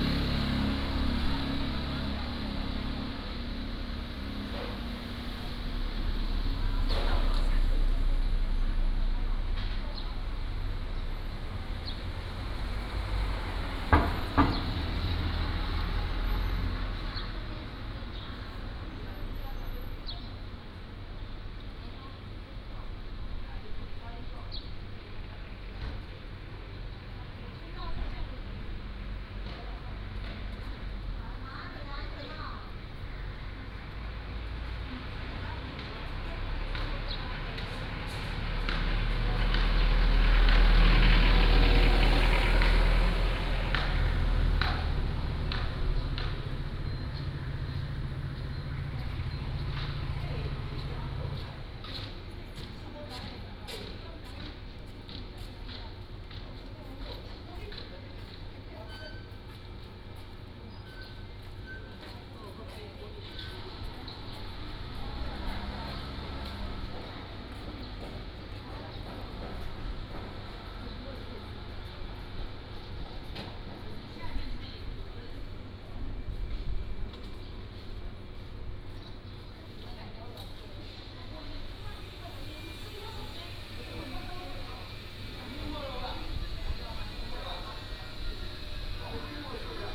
台江大飯店, Beigan Township - Small village
Small village, Birdsong, Traffic Sound
馬祖列島 (Lienchiang), 福建省 (Fujian), Mainland - Taiwan Border, 13 October 2014